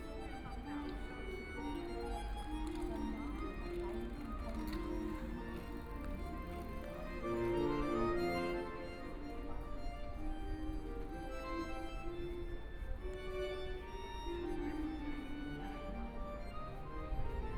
Maffeistraße, Munich 德國 - In the tram stop
In the tram stop, Street music, Pedestrians and tourists
Munich, Germany, 11 May, 11:43